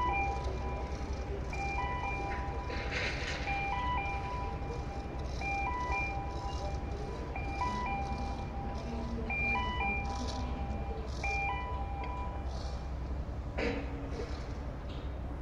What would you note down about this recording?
Crane and work noises at floating dock, Dunkerque, France - MOTU traveller Mk3, Rode NT-2A